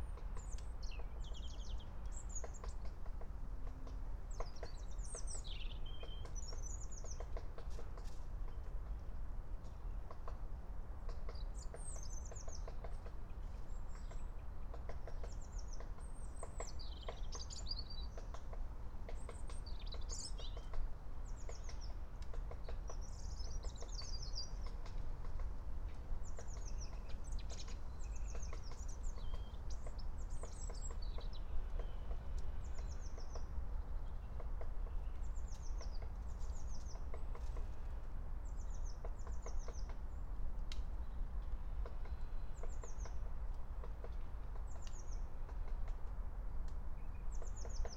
09:31 Berlin, Alt-Friedrichsfelde, Dreiecksee - train junction, pond ambience